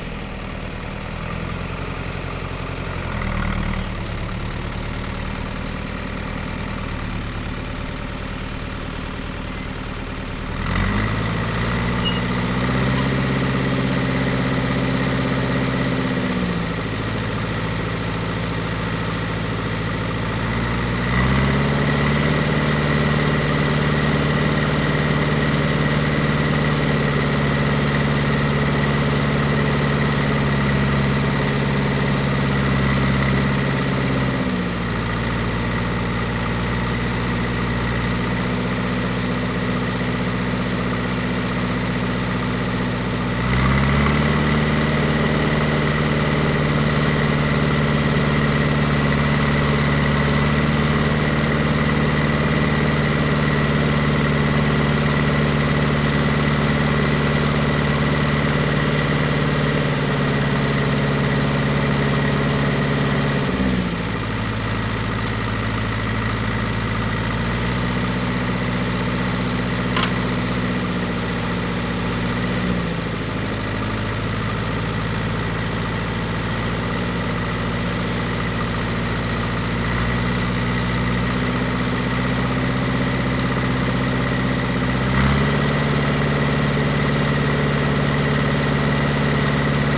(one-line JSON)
{"title": "Morasko, field road near train tracks - tractor", "date": "2016-02-08 12:35:00", "description": "man working with a tractor, gathering some cut down branches into a trailer. (sony d50)", "latitude": "52.47", "longitude": "16.91", "altitude": "99", "timezone": "Europe/Warsaw"}